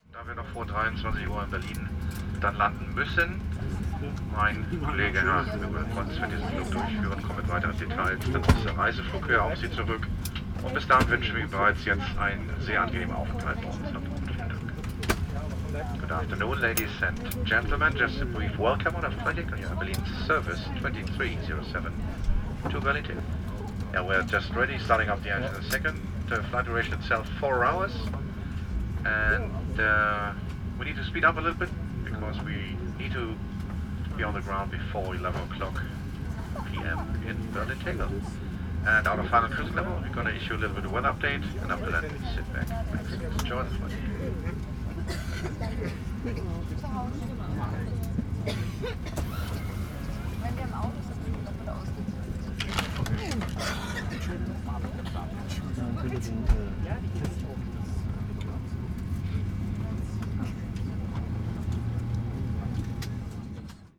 Madeira airport - flight AB2307
flight announcement before take off